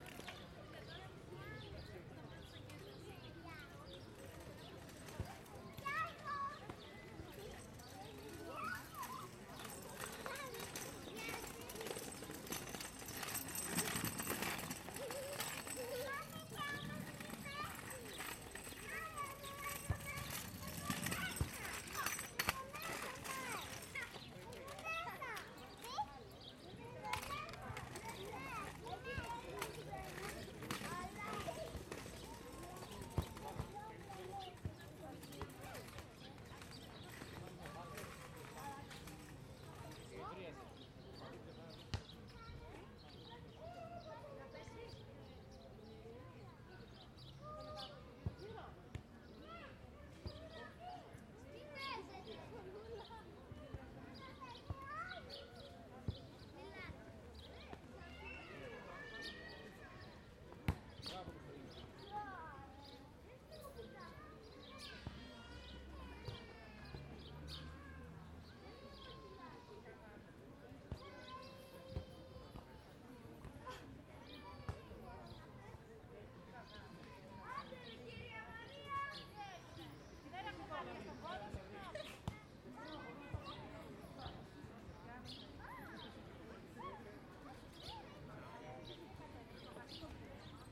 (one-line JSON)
{"title": "Ικονίου, Λυκούργου Θρακός και, Ξάνθη, Ελλάδα - Park Megas Alexandros/ Πάρκο Μέγας Αλέξανδρος- 12:30", "date": "2020-05-12 12:30:00", "description": "Kids playing, people talking distant.", "latitude": "41.14", "longitude": "24.89", "altitude": "72", "timezone": "Europe/Athens"}